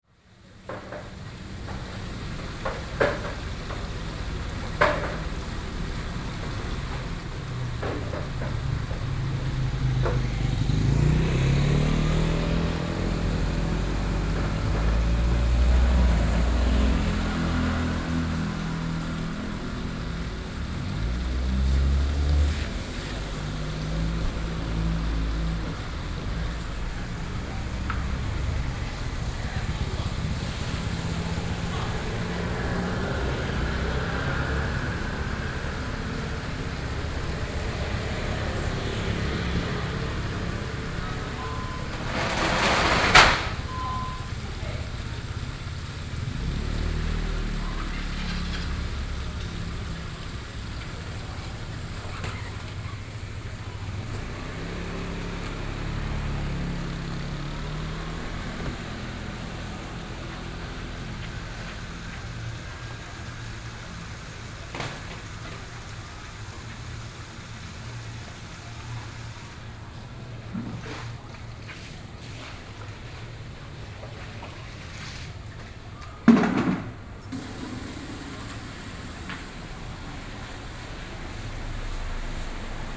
Fengjia Rd., Ruifang Dist., New Taipei City - in a small alley
Traffic Sound, in a small alley
Sony PCM D50+ Soundman OKM II
5 June, 14:30